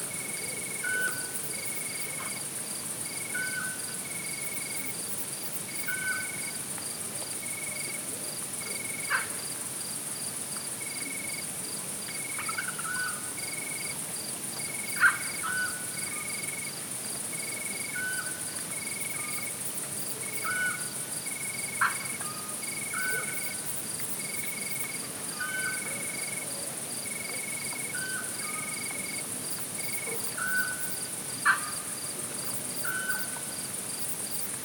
SBG, Mas Reig - Noche
Un paisaje sonoro nocturno de gran riqueza y densidad en Mas Reig, con la presencia de autillos y algún otro ave, anfibios en la balsa y sonidos distantes procedentes desde los campos colindantes, sobre el fondo continuo producido por los insectos en esta época del año.
9 August 2011, 23:00